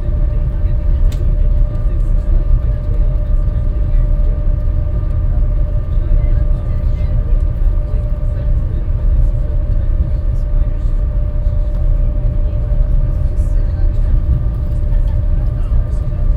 vancouver, seabus to north vancouver, on it's way
sound of the motor and people talking in the sea bus cabin
soundmap international
social ambiences/ listen to the people - in & outdoor nearfield recordings